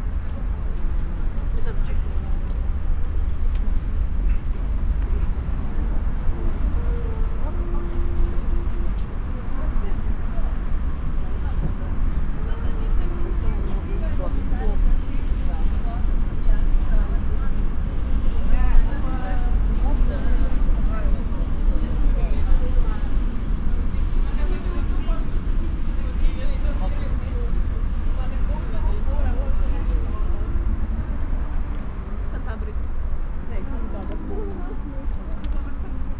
tivoli park, ljubljana - trumpeter, with cigarette in his hand
railway and road underpass, trumpeter plays his music ... unfortunately he stopped, curious to know, whats in my hand and on my head ...
Ljubljana, Slovenia, January 2014